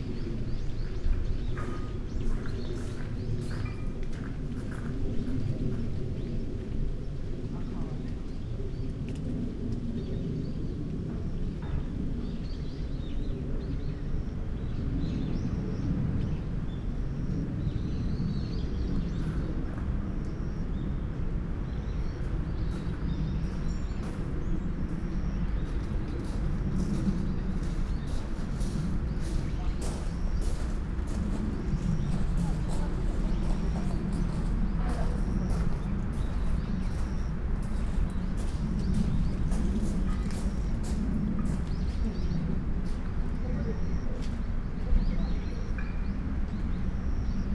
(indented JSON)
{
  "title": "cherry tree, Honpoji, Kyoto - red leaves, gray gravel carpet",
  "date": "2014-10-31 14:01:00",
  "description": "gardens sonority, steps, birds, voices",
  "latitude": "35.04",
  "longitude": "135.75",
  "altitude": "66",
  "timezone": "Asia/Tokyo"
}